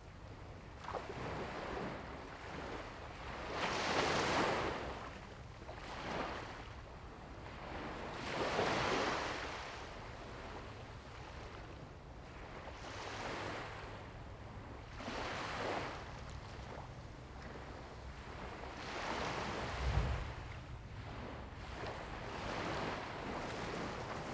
Sound of the waves
Zoom H2n MS+XY
福建省, Mainland - Taiwan Border, November 2014